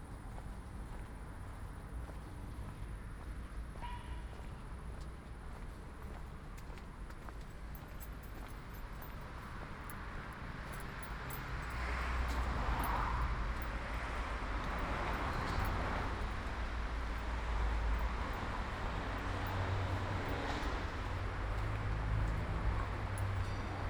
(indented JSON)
{
  "title": "Ascolto il tuo cuore, città. I listen to your heart, city. Chapter CXIII - Valentino Park in summer at sunset soundwalk and soundscape in the time of COVID19: soundwalk & soundscape",
  "date": "2020-06-30 21:15:00",
  "description": "\"Valentino Park in summer at sunset soundwalk and soundscape in the time of COVID19\": soundwalk & soundscape\nChapter CXIII of Ascolto il tuo cuore, città. I listen to your heart, city\nTuesday, June 30th 2020. San Salvario district Turin, to Valentino park and back, one hundred-twelve days after (but day fifty-eight of Phase II and day forty-five of Phase IIB and day thirty-nine of Phase IIC and day 16th of Phase III) of emergency disposition due to the epidemic of COVID19.\nStart at 9:16 p.m. end at 10:03 p.m. duration of recording 46’50”; sunset was at 9:20 p.m.\nThe entire path is associated with a synchronized GPS track recorded in the (kmz, kml, gpx) files downloadable here:",
  "latitude": "45.06",
  "longitude": "7.69",
  "altitude": "221",
  "timezone": "Europe/Rome"
}